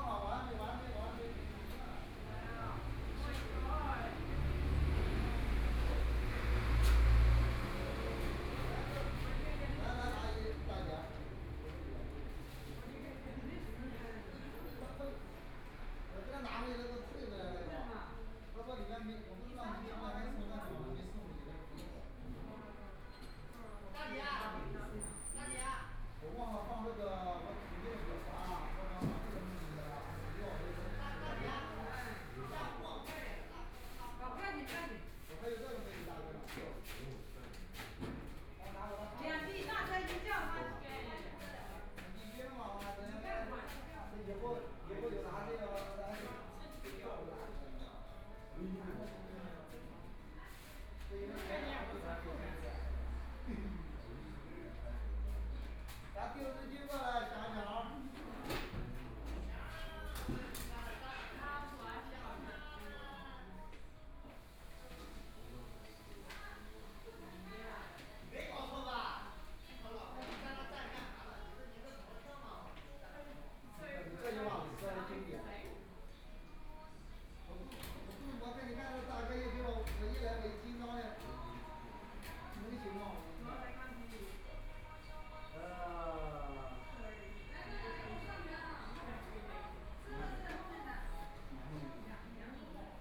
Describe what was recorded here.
In the restaurant, Binaural recording, Zoom H6+ Soundman OKM II